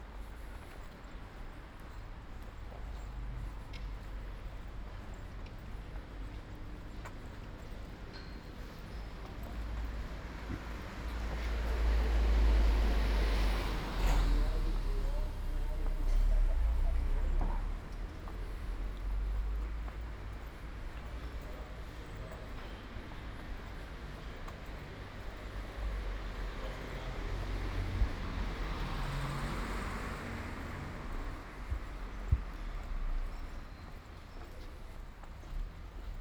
Ascolto il tuo cuore, città. I listen to your heart, city. Several Chapters **SCROLL DOWN FOR ALL RECORDINGS - “La flânerie III après trois mois aux temps du COVID19”: Soundwalk
“La flânerie III après trois mois aux temps du COVID19”: Soundwalk
Chapter CV of Ascolto il tuo cuore, città. I listen to your heart, city
Friday, June 12th 2020. Walking in the movida district of San Salvario, Turin ninety-four days after (but day forty of Phase II and day twenty-seven of Phase IIB and day twenty-one of Phase IIC) of emergency disposition due to the epidemic of COVID19.
Start at 7:30 p.m., end at h. 8:10 p.m. duration of recording 39'46''
As binaural recording is suggested headphones listening.
The entire path is associated with a synchronized GPS track recorded in the (kml, gpx, kmz) files downloadable here:
This soundwalk follows in similar steps as two days ago, June 10, and about three months earlier, Tuesday, March 10, the first soundtrack of this series of recordings.
Piemonte, Italia, 12 June 2020